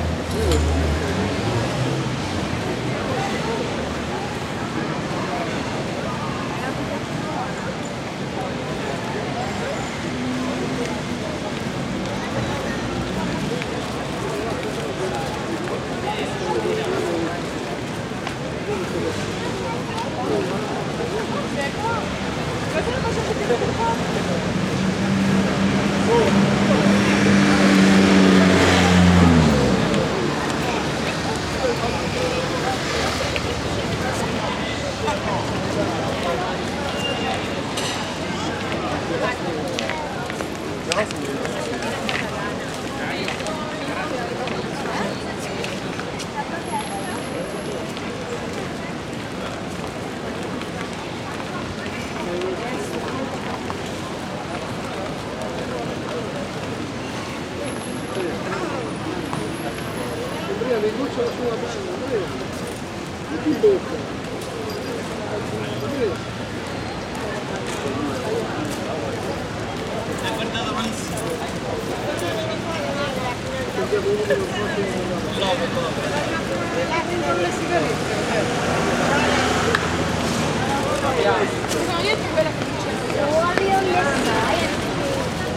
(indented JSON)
{
  "title": "barcelona, la rambla",
  "date": "2010-04-29 10:10:00",
  "description": "street life on the famous ramblas on a friday morning in spring - dense street traffic and shopping pedestrinas\ninternational city scapes - topographic field recording and social ambiences",
  "latitude": "41.38",
  "longitude": "2.17",
  "altitude": "23",
  "timezone": "Europe/Berlin"
}